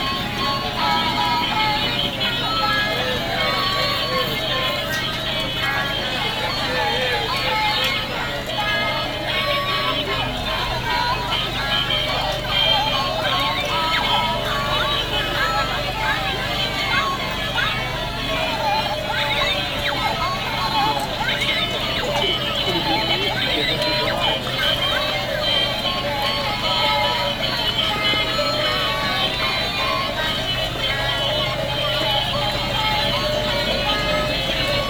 London, sunday morning, market on Middlesex Street, market stall selling toys with sound
City of London, UK, 3 October 2010, 11:00